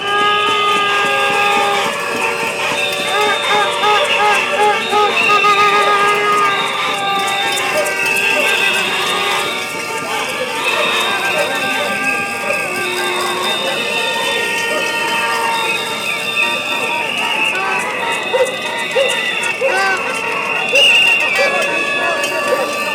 Zagreb, the art of noise in Gunduliceva - against the devastation of Varsavska street
small instruments producing a lot of noise in demonstrations against the devastation of the public pedestrian zone in Varsavska street, center of town